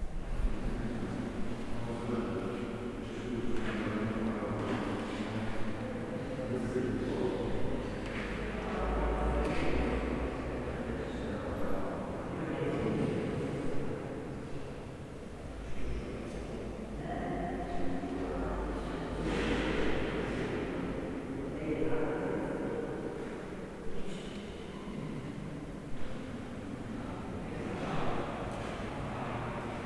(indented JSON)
{
  "title": "Sé, Guarda Municipality, Portugal - Sé da Guarda",
  "date": "2011-06-29 15:28:00",
  "description": "Sé da Guarda (cathedral), resonant space, people talking and walking, stereo, zoom h4n",
  "latitude": "40.54",
  "longitude": "-7.27",
  "altitude": "1032",
  "timezone": "Europe/Lisbon"
}